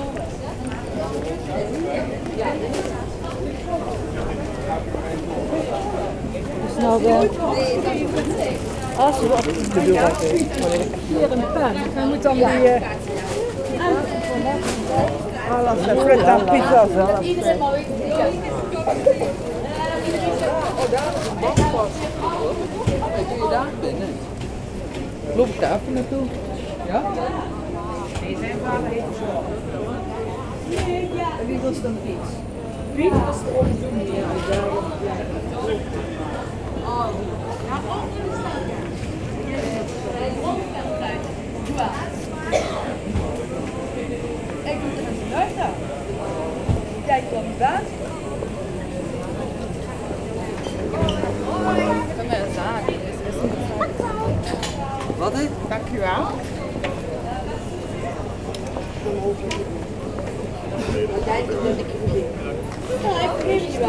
Maastricht, Pays-Bas - Local market
On the main square of Maastricht, there's a local market, essentially with food trucks. Discreet people buy meal in a quiet ambiance.
Maastricht, Netherlands